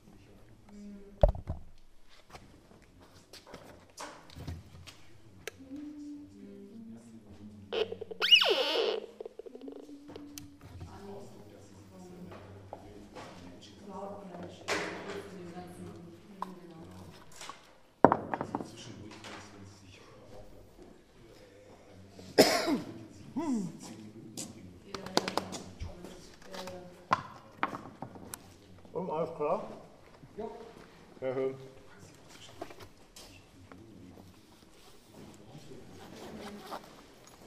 Berlin-Pankow, Berlin, Deutschland - atelier
Reahearsal "Gruenanlage / Nora Volkova" about to commence.
Berlin, Germany, 12 January 2013, 18:45